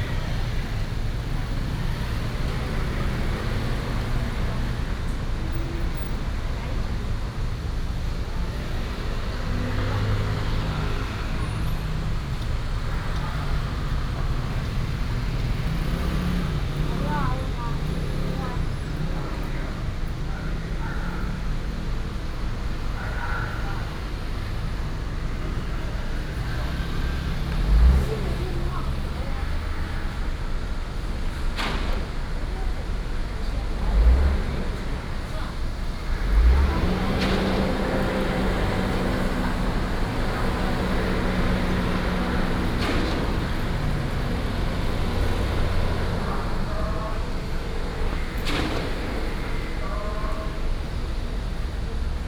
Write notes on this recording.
At the corner of the road, traffic sound, Primary school students, Building Construction